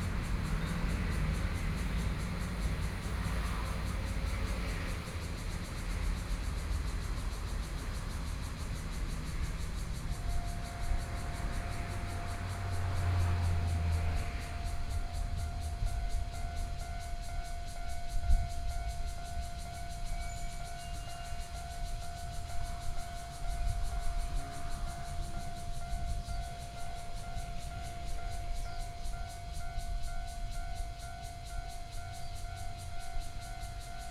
Zhonghua Rd., Hualien City - Train traveling through
In large trees, Traffic Sound, Cicadas sound, Train traveling through